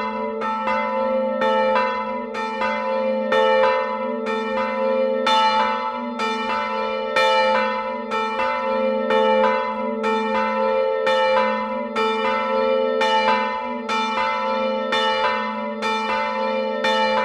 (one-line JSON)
{"title": "Rue de l'Abbaye, Belhomert-Guéhouville, France - Belhomert - Église St-Jean", "date": "2019-11-12 10:30:00", "description": "Belhomert (Eure-et-Loir)\nÉglise St-Jean\nla volée", "latitude": "48.50", "longitude": "1.06", "altitude": "200", "timezone": "Europe/Paris"}